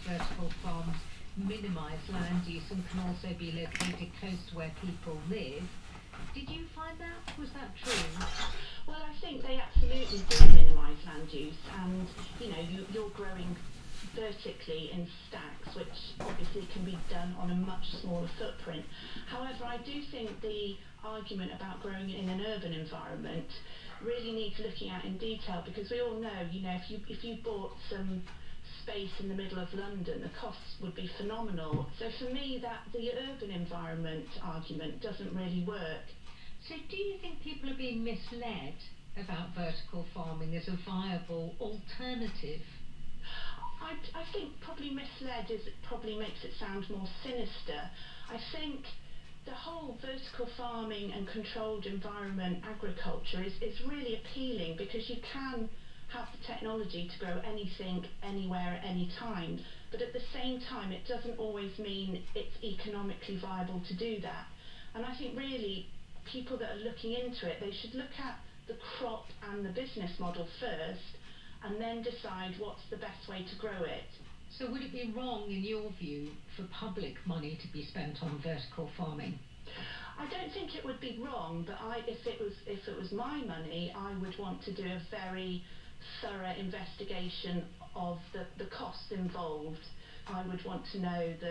Luttons, UK - the early morning routine ...
the early morning routine ... lights ... radio ... kettle ... pills ... tea ... cereal ... download ... Luhd binaural mics in binaural dummy head ... bird calls ... mew gulls on replay on Rad Ap ... blackbird song ... clock which 'sings' the hours ...
Malton, UK, 8 January 2019, 05:50